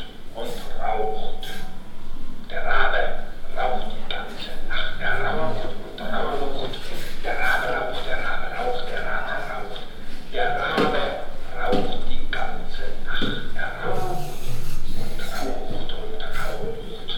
cologne, museum ludwig, media works
here: Andreas Fischer - Rabenrohr - Sound Installation 2007
media works at the contemporary art museum ludwig
Cologne, Germany